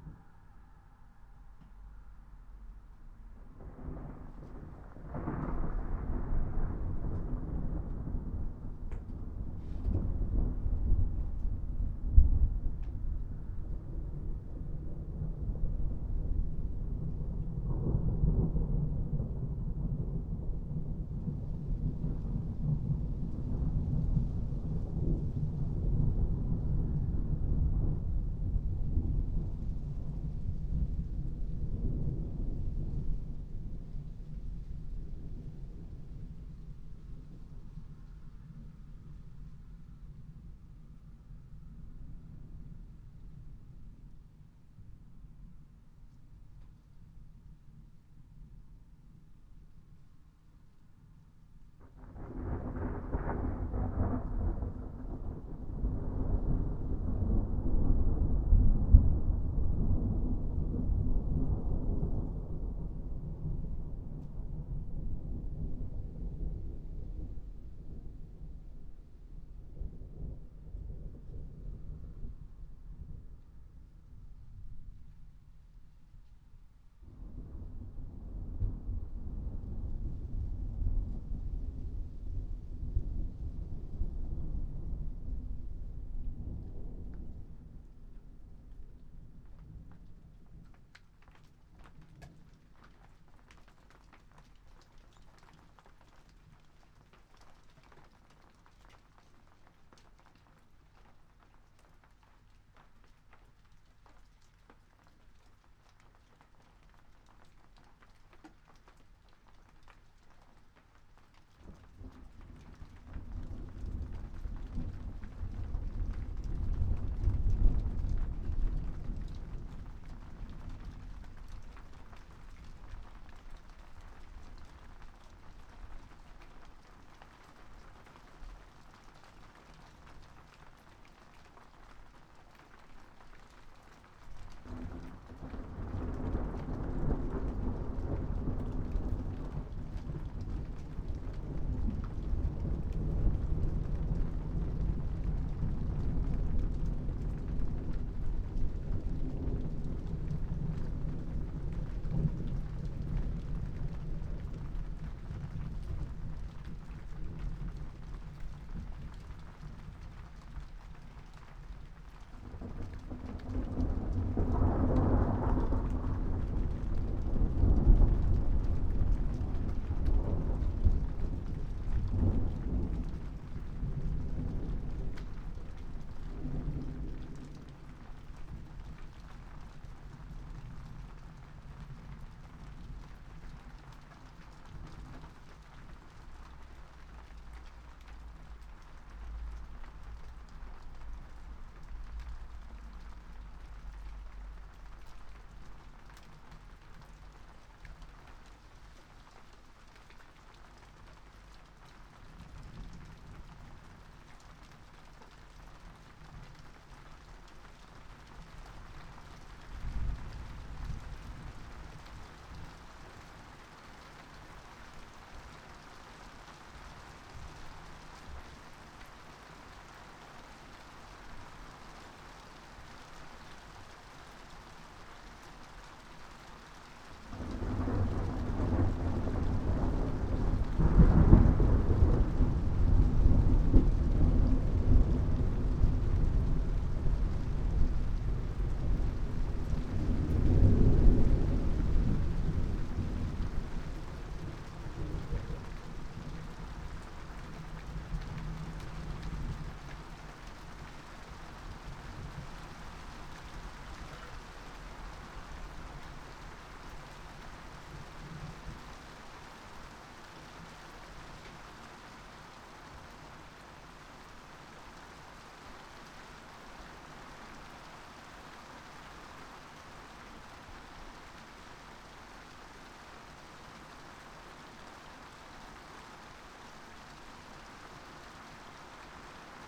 Luttons, UK - thunderstorm adjacent ...
thunderstorm adjacent ... xlr sass to zoom h5 ... background noise ... traffic ...